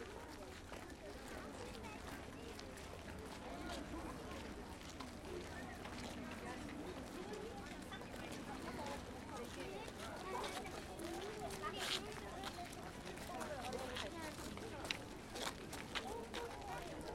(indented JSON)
{
  "title": "Khoroo, Ulaanbaatar, Mongolei - pedestrian road",
  "date": "2013-06-01 14:37:00",
  "description": "there are beyond the loud streets roads that are only used by pedestrians, mostly through resident areas",
  "latitude": "47.91",
  "longitude": "106.91",
  "altitude": "1293",
  "timezone": "Asia/Ulaanbaatar"
}